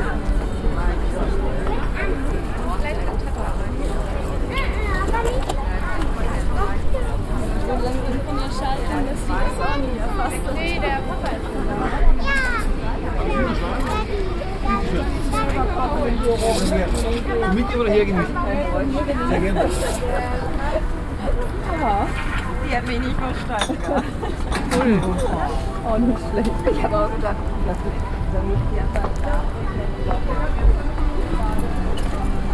… talking a coffee at a stall at the edge of the market… children playing around their chatting parents… the flower stall across is packing up…
… eine Kaffeepause am Rande des Markts… Kinder spielen um ihre quatschenden Eltern herum… der Blumenstand gegenüber packt zusammen…
Hamm, Germany, 20 December, ~13:00